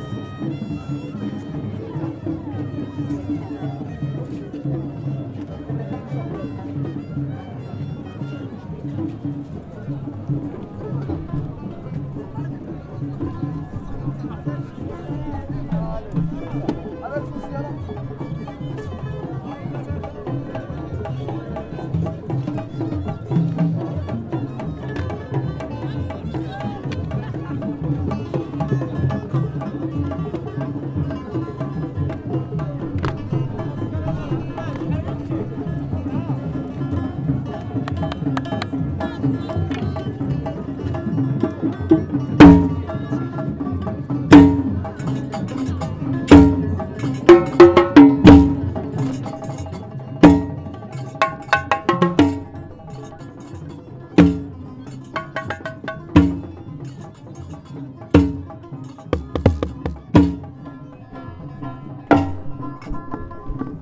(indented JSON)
{
  "title": "Place Jemaa El Fna, Marrakech, Maroc - Place Jemaa El Fna in the evening",
  "date": "2014-03-22 20:30:00",
  "description": "Evening night, you can listen musicians",
  "latitude": "31.63",
  "longitude": "-7.99",
  "altitude": "469",
  "timezone": "Africa/Casablanca"
}